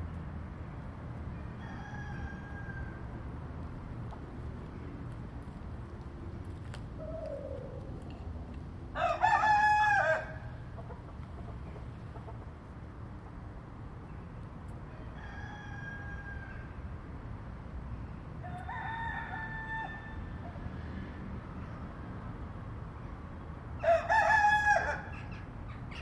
Binckhorst, Den Haag - Binckhorst Cockerels
Wild chickens live in the industrial area of the Binckhorst, Den Haag. Zoom HnN Spatial Audio (Binaural decode)
Zuid-Holland, Nederland, 22 May 2022